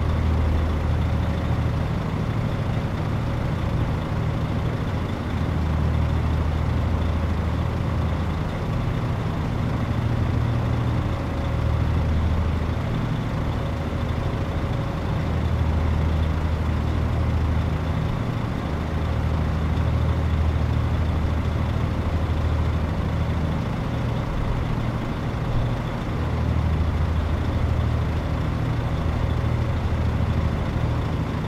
Strait of Magellan, Magallanes y la Antártica Chilena, Chile - storm log - strait of magellan ferry
ferry over magellan strait, between trucks, wind SW 29km/h, ZOOM F1 / XYH-6 cap
The ferry between Punta Delegada and Bahia Azul is one of the connections to the Isla Grande de Tierra del Fuego over the Primera Angostura, the sound the Strait of Magellan. All goods traveling further south pass here, truck engines kept running all the time...
Región de Magallanes y de la Antártica Chilena, Chile, 14 February 2021, 20:25